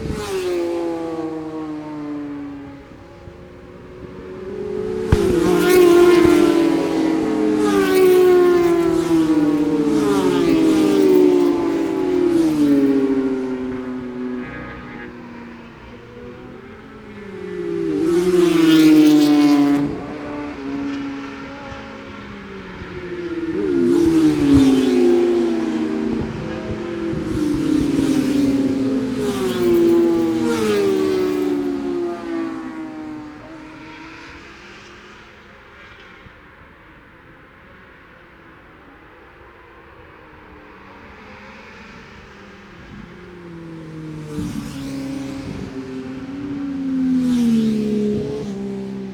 Scarborough UK - Scarborough Road Races 2017 ...
Cock o'the North Road Races ... Oliver's Mount ... Solo |Open practice ... red-flagged session ... ...
24 June 2017, ~11:00